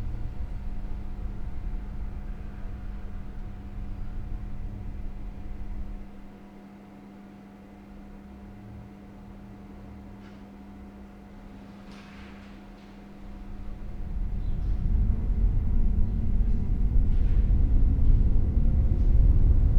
there was quite a big gap under the door and the wind was wailing through it, carrying sounds of the village with it. hostel staff moving about on the other side.